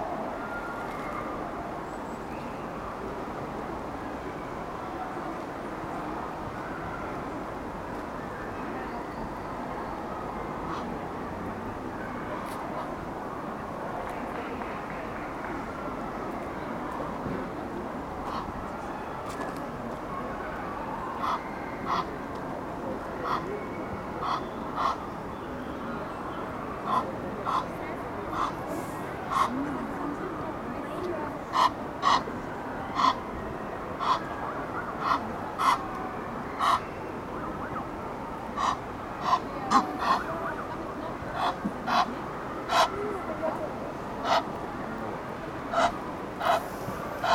Ottignies-Louvain-la-Neuve, Belgique - Egyptian gooses
On a very busy day on the Bois des Rêves leisure parc, emden goose and egyptian gooses on a pontoon. After a few time, two young children are very interested by the birds.
Ottignies-Louvain-la-Neuve, Belgium, 10 July 2016